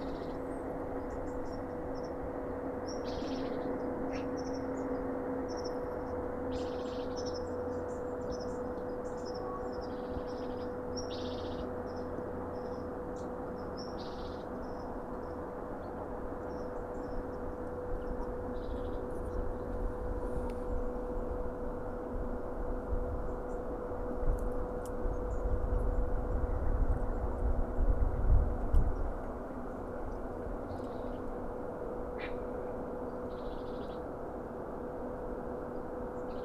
вулиця Шмідта, Костянтинівка, Донецька область, Украина - Звуки птиц и промышленное производство
Пение птиц в кустах на руинах промышленного предприятия
11 November, 08:10